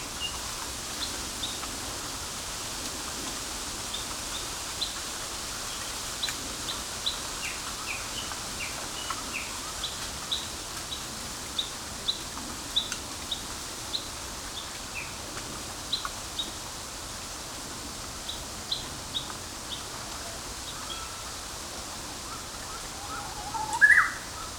Minamizakura, Yasu City, Shiga Prefecture, Japan - Japanese bush warbler, pheasant, and crows
Recorded on a Sunday afternoon in a small bamboo grove along Yasugawa (river) with a Sony PCM-M10 recorder. Processed with Audacity on Fedora Linux: trimmed length to 10 minutes, applied high-pass filter (6dB/octave at 1000Hz), and normalized.